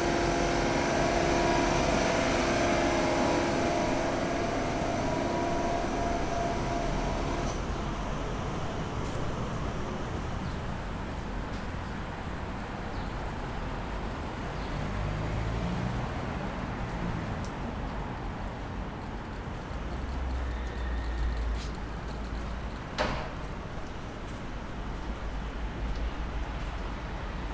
Norient Office, Progr, Waisenhausplatz, Bern, Switzerland - construction site
Construction site noises, machines, water, traffic at Kulturzentrum PROGR, just in front of the Norient Headquarters in Bern, Switzerland. Recorded on Zoom H4n by Michael Spahr (VJ Rhaps).
Berne, Switzerland, July 2012